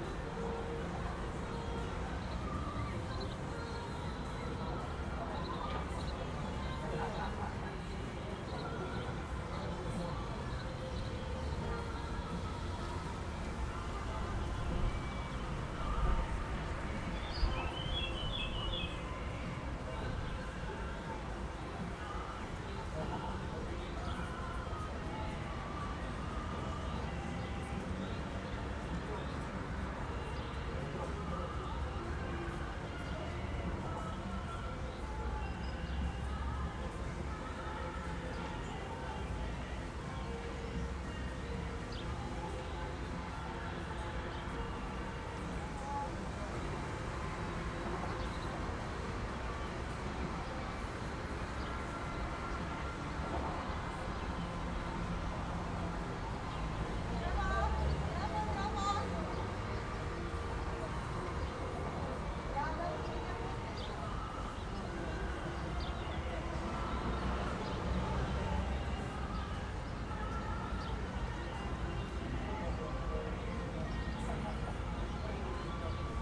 stairs to the riverfront from Koroška cesta, Maribor, Slovenia - local ambiance with cafe and bridge
from this vantage point overlooking the river, sounds from the local housing estate, the café within it, and traffic from the bridge over the river in the distance were all audible
16 June 2012, ~14:00